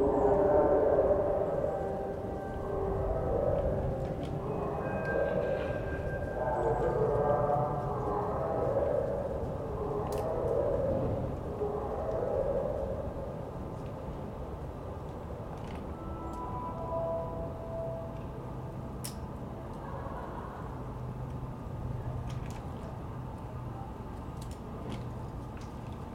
Every first Wednesday of the month the sound alarming sound of sirens goes off throughout the city of Prague as part of the public warning system. Set during WWII, the sirens serve as a general warning system, including for air raids.
This is a mono recording captured in the borough of Dejvicka, Prague.
Hlavní město Praha, Praha, Česká republika, 4 March, 12:00